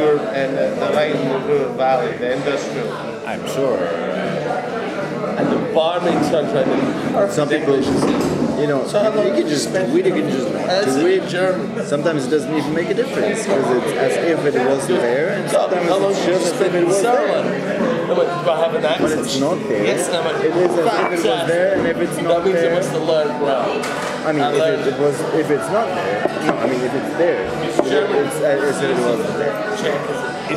Explaining the LS 5 to a friend in a pub in Prague
How many are the fragments that constitute a life in recordings? My friend, a painter, mainly concerned with visual memories, wants to know what the little furry thing in my hand is good for...